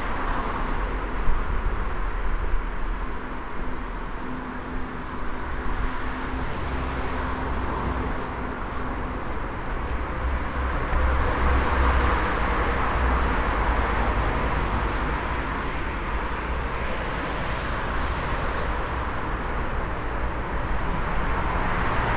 berlin, yorckstraße, underpass to schöneberg